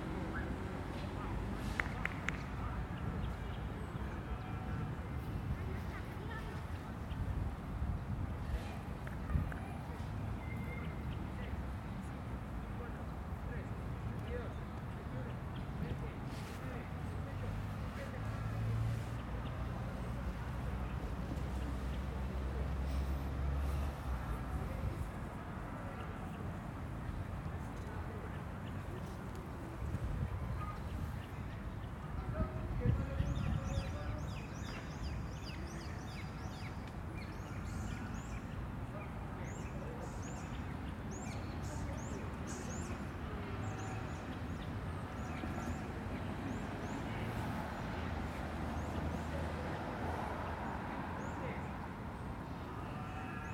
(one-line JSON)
{"title": "Parque Barrio José Joaquín Várgas, Dg, Bogotá, Colombia - José Joaquín Vargas Park on a cloudy day with blizzards, little traffic and birds singing.", "date": "2021-11-18 16:25:00", "description": "Jose Joaquin Vargas Park is located near the lung of Bogota, it is a very large park where you can hear the birds, children playing, people playing sports, playing soccer, tennis, basketball and volleyball, in a meeting point for people with their dogs with few dialogues, is near a street with few cars, motorcycles and is in an area of airplane flights.\nIn addition, people pass by selling their products, such as ice cream, candy and food.", "latitude": "4.67", "longitude": "-74.09", "altitude": "2553", "timezone": "America/Bogota"}